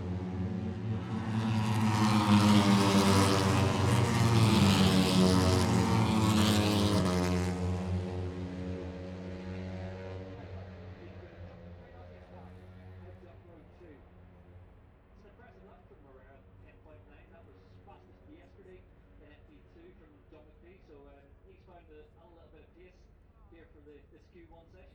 Silverstone Circuit, Towcester, UK - british motorcycle grand prix 2022 ... moto three ...
british motorcycle grand prix 2022 ... moto three qualifying two ... outside of copse ... dpa 4060s clipped to bag to zoom h5 ...